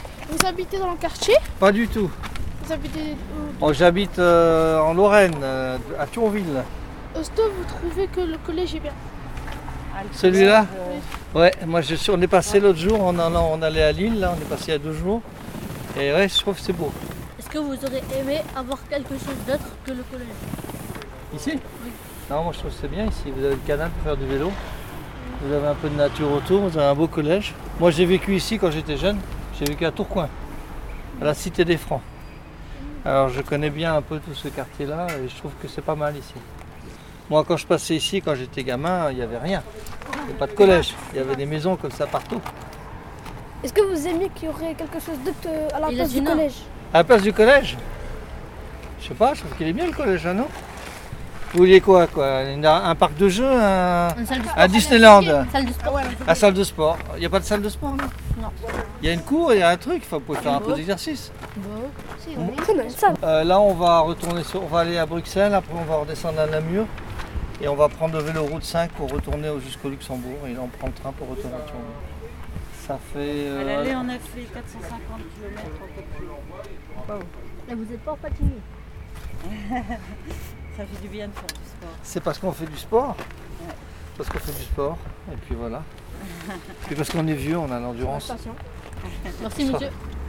Quai de Rouen, Roubaix, France - Un couple de cyclistes
Interview d'un couple de cyclistes